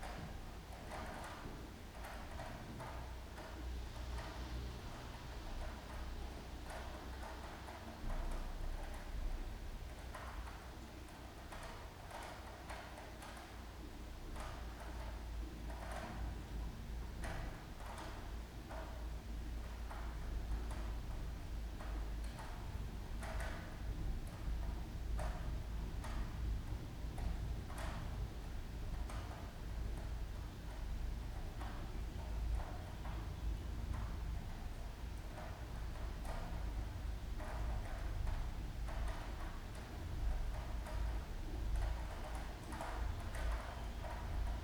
while windows are open, Maribor, Slovenia - closed windows, raindrops